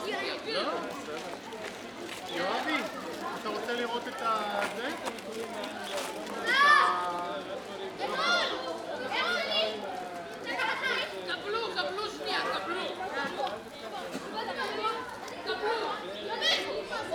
Kiryat Ono, Israel - School back yard, murumur, Hebrew, Purim
11 March, ~00:00